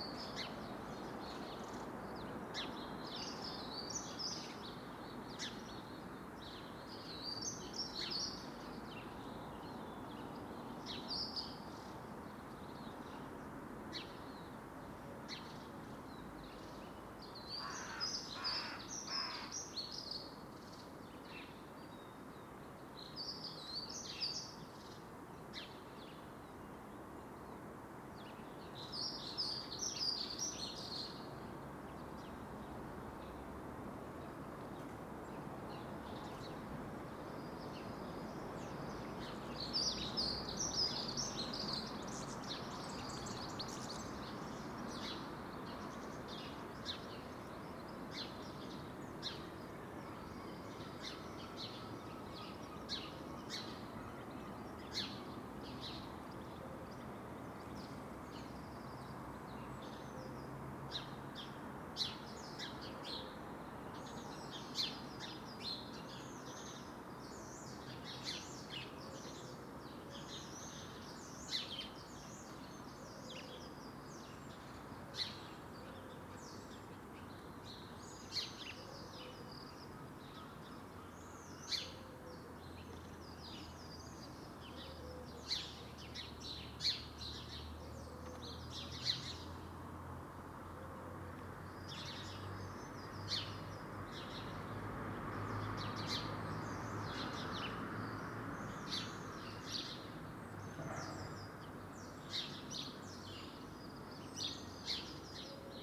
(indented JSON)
{
  "title": "Contención Island Day 55 outer northeast - Walking to the sounds of Contención Island Day 55 Sunday February 28th",
  "date": "2021-02-28 07:28:00",
  "description": "The Poplars Roseworth Avenue The Grove Moor Road North St Nicholas Avenue Rectory Grove Church Road Church Lane\nSparrow chatter\nacross small front gardens\nbehind low walls\nCars parked\non the south side of the lane\na lone walker passes by\nLost mortar below roof tiles\na sparrow flies to the hole",
  "latitude": "55.01",
  "longitude": "-1.61",
  "altitude": "57",
  "timezone": "Europe/London"
}